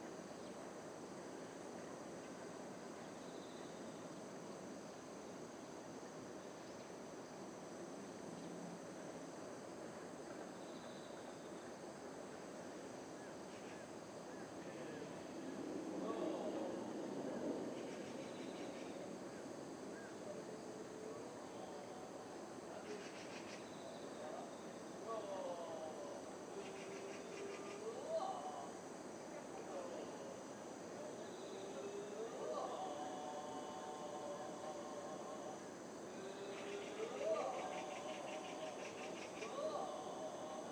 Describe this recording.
Daesung Buddhist Temple, monks practising scriptures, 대성사, 불경 연습